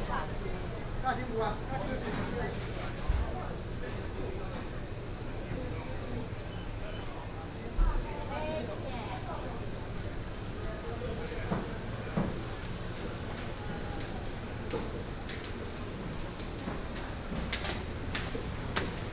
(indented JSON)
{
  "title": "Chenggong Market, 基隆市仁愛區 - Walking in the market",
  "date": "2016-08-04 08:21:00",
  "description": "Traffic Sound, Walking through the market",
  "latitude": "25.13",
  "longitude": "121.74",
  "altitude": "14",
  "timezone": "Asia/Taipei"
}